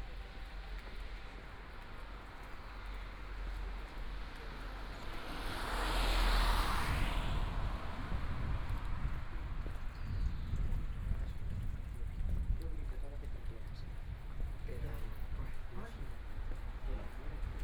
Hermann-Lingg-Straße, 慕尼黑德國 - In the Street
Morning, walking the streets, Traffic Sound, Voice traffic lights